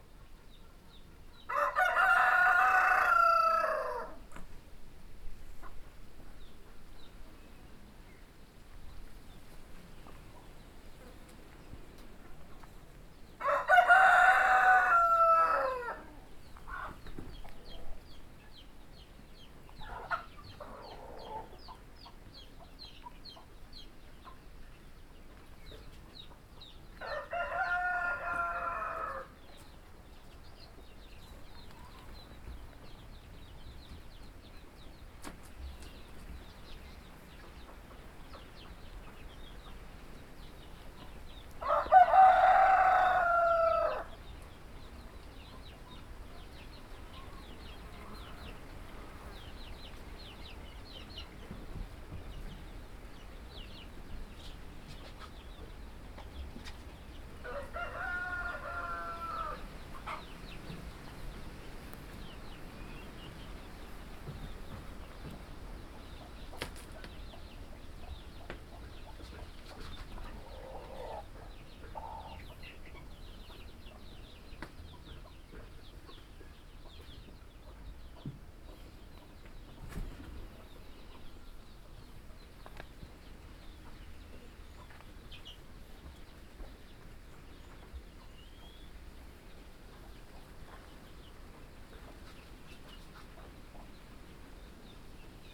Harmony farm, Choma, Zambia - midday sounds around the farm
heavy mid-day breeze in the large trees... and farm life in motion...
12 July 2016, 13:20